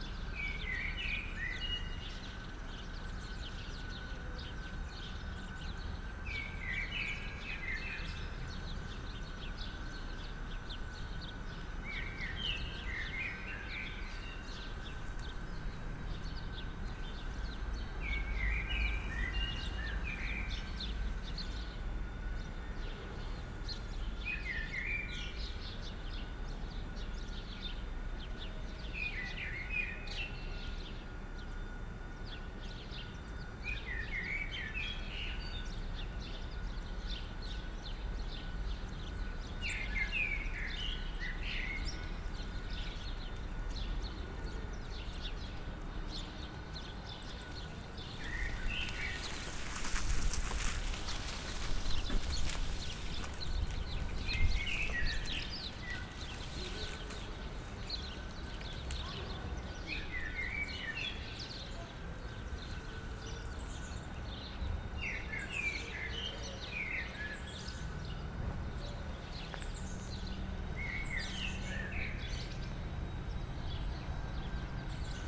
playground, Maybachufer, Berlin, Deutschland - playground ambience
no kids, no parents...
(Sony PCM D50 Primo EM172)
25 March 2020, 16:05